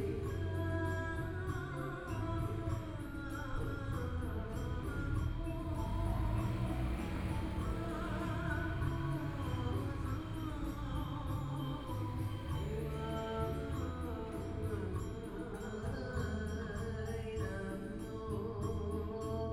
Sanmin St., Hualien City - Funeral
Funeral, Chanting voices, Traffic Sound
Binaural recordings
Zoom H4n+ Soundman OKM II